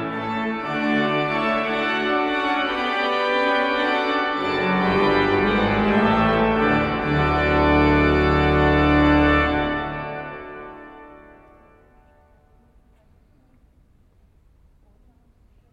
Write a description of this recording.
Inside of the church of Aarau there is a rehearsal with the organ.